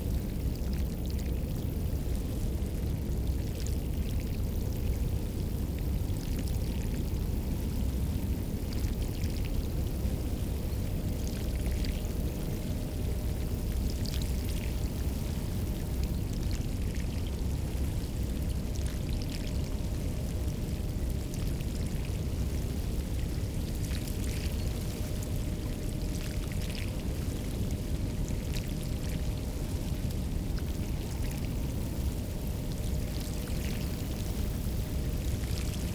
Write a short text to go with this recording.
Feet in the water, sound of the reed and the wetlands of the Schelde river.